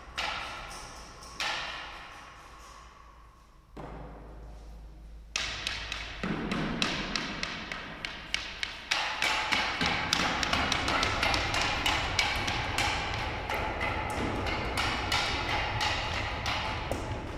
Mayrau mine museum
acoustic piece for the dressing room of the former Mayrau mine
Czech Republic